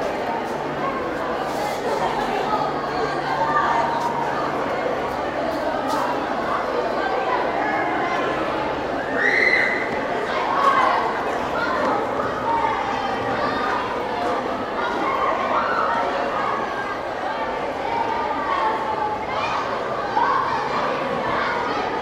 Gualaquiza, Ecuador - School at recess
While recording a documentary, I sat on the middle of the school´s courtyard and recorded this with TASCAM DR100